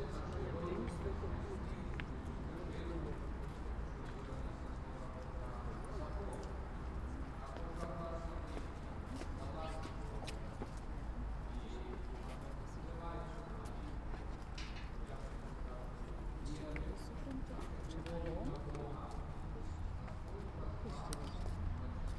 Independence Place, Minsk, Belarus, at the church
2 August